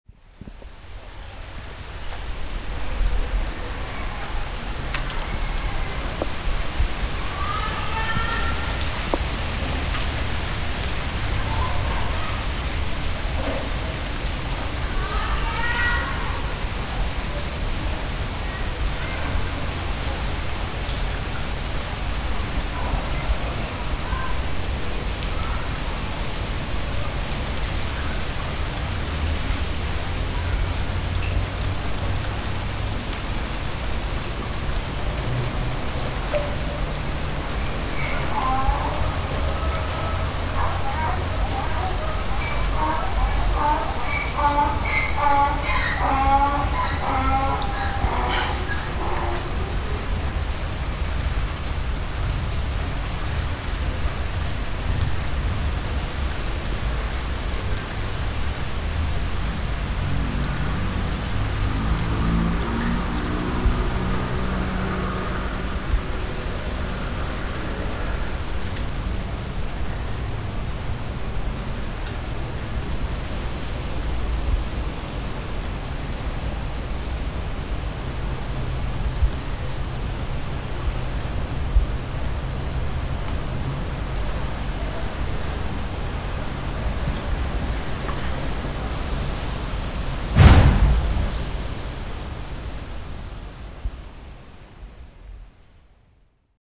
Stari Grad, rain falling on a fish-pond - P.Hektorovic's villa
echo of voices, rain, an ass; a fish-pond surrounded by arcades in the garden of the loveliest villa on the island, 16th century
Croatia, 28 August 1996